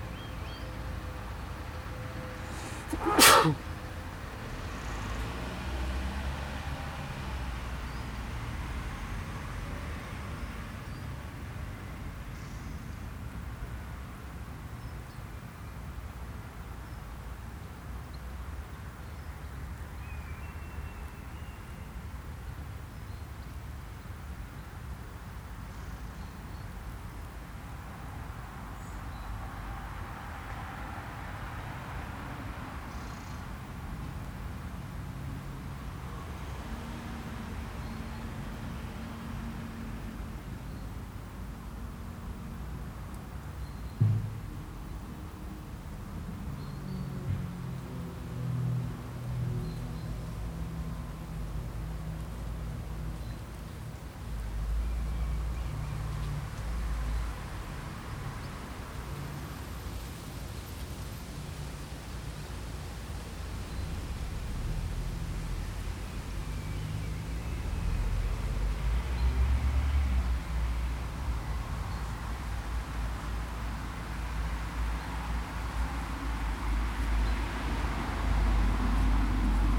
Memorial Garden, St Leonard's Church, Woodcote, Oxon - St Leonard's Memorial Garden
A twenty minute meditation sitting beside the memorial garden at St Leonard's Church in Woodcote. Recorded using the built-in microphones of a Tascam DR-40 as a coincident pair.